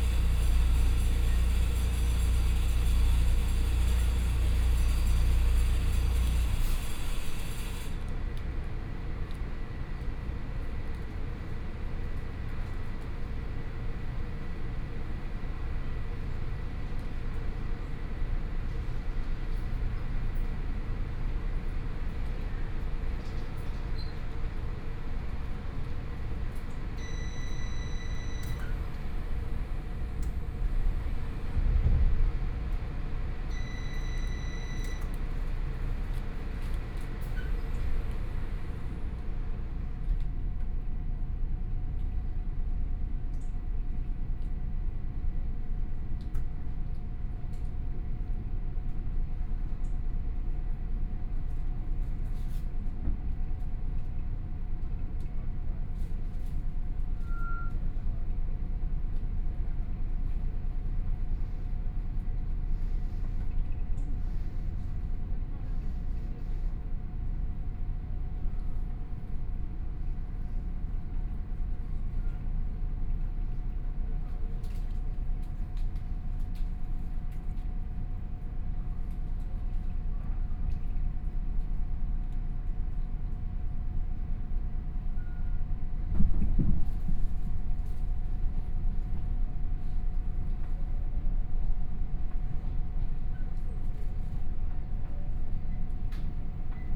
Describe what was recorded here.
from Wuri Station to Chenggong Station, Binaural recordings, Zoom H4n+ Soundman OKM II